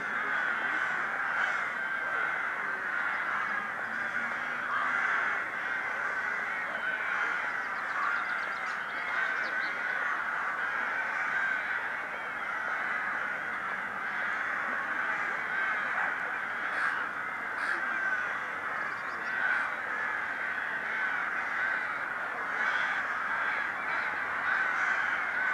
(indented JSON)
{
  "title": "Lithuania, Utena, town birds",
  "date": "2011-04-12 12:20:00",
  "description": "crows and gulls and smaller things",
  "latitude": "55.51",
  "longitude": "25.61",
  "altitude": "105",
  "timezone": "Europe/Vilnius"
}